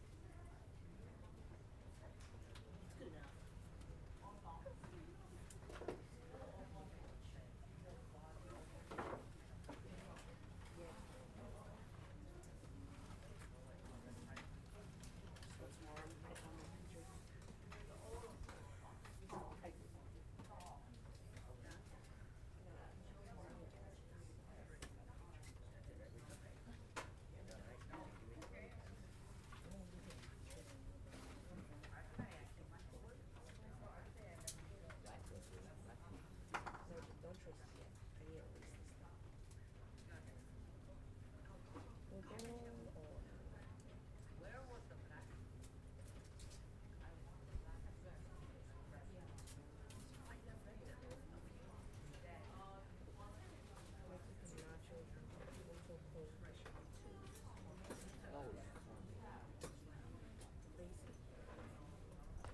Flushing, Queens, NY, USA - Queens Library Travel Guide Section
Queens Library (main branch) 2nd floor Travel Guide Section